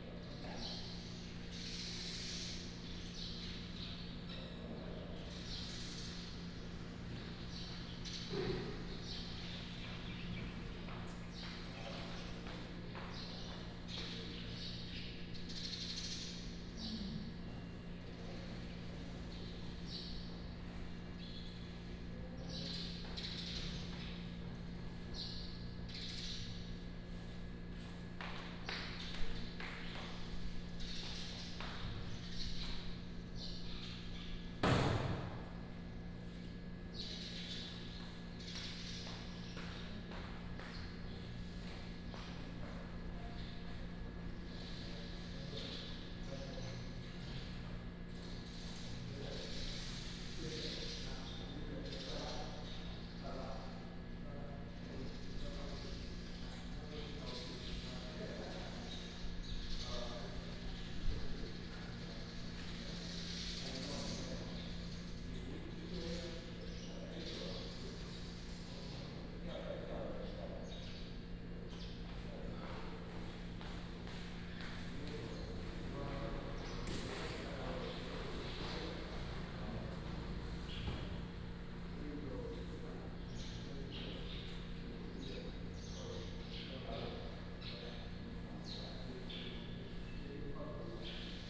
太麻里火車站, Taitung County - In the station hall
In the station hall, birds sound, Footsteps
31 March 2018, 10:28